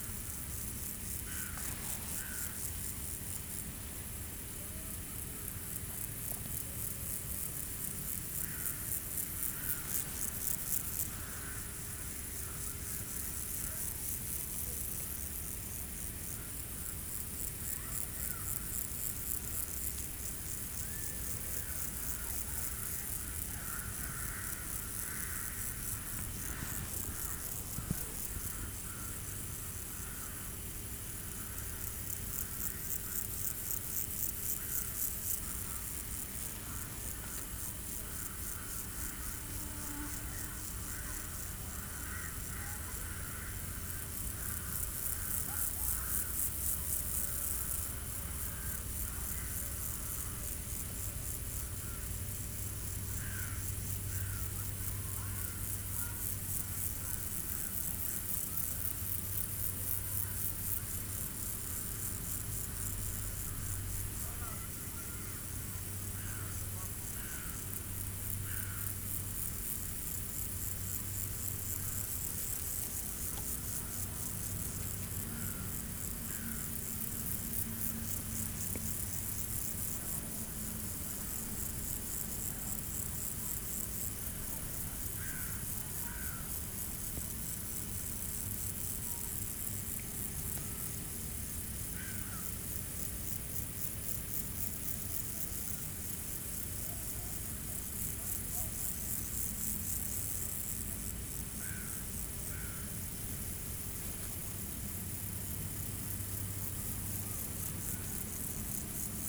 Châtillon-sur-Seine, France - Storm
During this evening, it's an hot and threatening athmosphere. A violent storm is brewing on the horizon. There's a lot of locusts and mosquitoes. During this night, we had 7 dangerous storms, whose 2 were enormous, and 2 storms again in the morning. Exhausting !